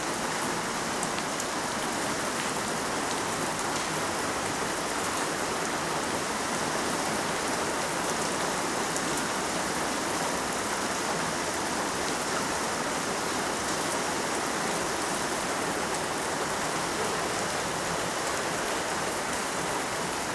Via Bossi, Pavia, Italy - Heavy rain
Exit from the house: heavy rain in the courtyard. sounds of water on the stones, gutter, shelter. Then enter in the house again.